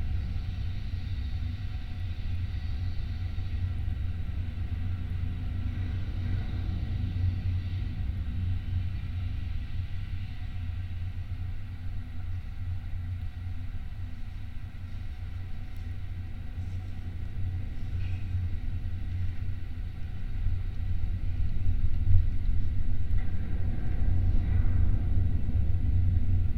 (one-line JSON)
{"title": "Kelmė, Lithuania, light tower", "date": "2019-07-23 14:05:00", "description": "contact microphones on metallic constructions of some abandoned light tower", "latitude": "55.63", "longitude": "22.94", "altitude": "115", "timezone": "Europe/Vilnius"}